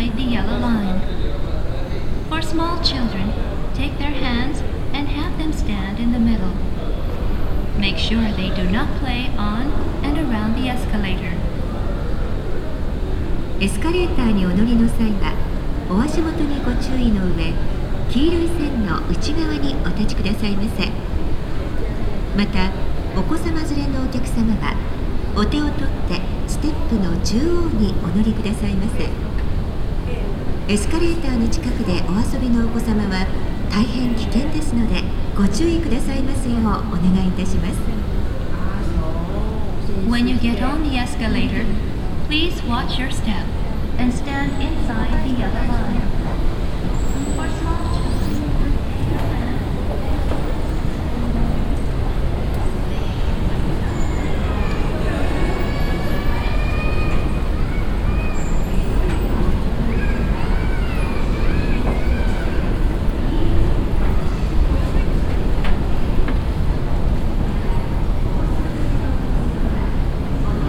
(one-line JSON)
{"title": "yokohama, landmark tower, moving staircase", "date": "2011-06-29 21:32:00", "description": "Automatic repeated voice at the moving steps inside the shopping mall.\ninternational city scapes - topographic field recordings and social ambiences", "latitude": "35.46", "longitude": "139.63", "altitude": "21", "timezone": "Asia/Tokyo"}